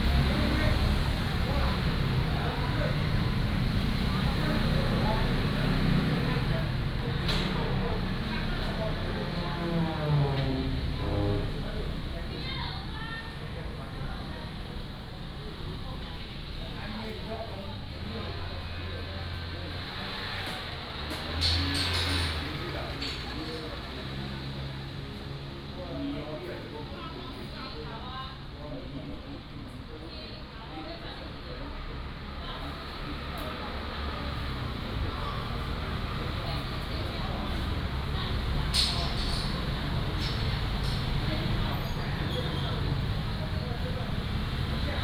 騰風宮, Hsiao Liouciou Island - in the temple
in the temple, Traffic Sound
白沙尾渡船碼頭[民營], 1 November